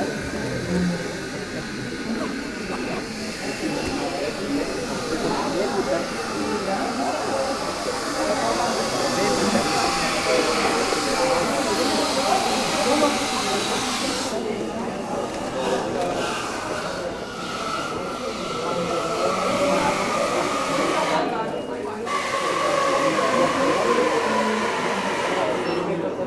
Gent, België - In the pastry shop
Aux Merveilleux de Fred (name in french), Mageleinstraat. An establishment where pastries and coffee are sold : it’s delicious and friendly. Terrible and adorable grandmothers !